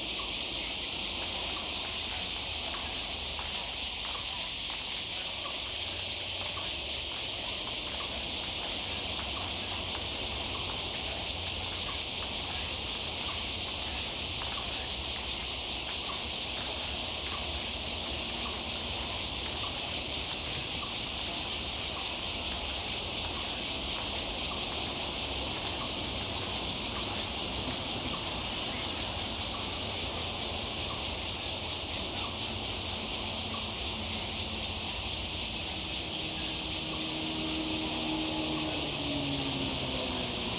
Fuyang Eco Park, 大安區台北市 - in the park
Many elderly people doing exercise in the park, Bird calls, Cicadas cry, Traffic Sound
Zoom H2n MS+XY